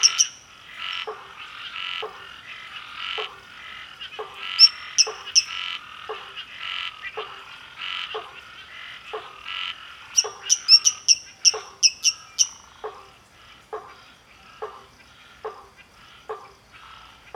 Recorded by an ORTF setup (Schoeps CCM4x2) on a Sound Devices Mixpre6
GPS: -22.392431, -44.553263
Sound Ref: BR-190812-07
Recorded during the Interativos 2019 organized by Silo
RJ, Região Sudeste, Brasil, August 2019